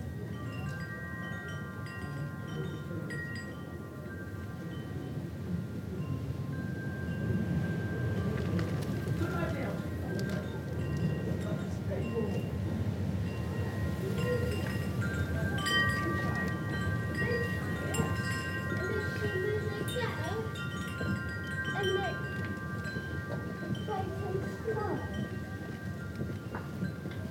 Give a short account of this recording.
I noticed a bell tower at the top of the street and wanted to hear the clock strike the hour. We wandered up there and noticed the sound of some wind chimes hanging outside a shop marked "tiny book store". It was a very windy day but I managed to get into a corner against the thick church walls to shield my recorder from the worst of the blasts. This gives the recording a bit of a claustrophobic feeling I think, as you can hear sounds reflecting back from a thick stone wall in a confined area. Alas with EDIROL R-09 and its little fluffy wind cover there is not much more to be done other than trying to find a non-windy spot! A pigeon started cooing, some people passed by, chatting, and our paper bag from Simon's pie shop rustled in the wind. The clock struck the hour about three minutes past the hour.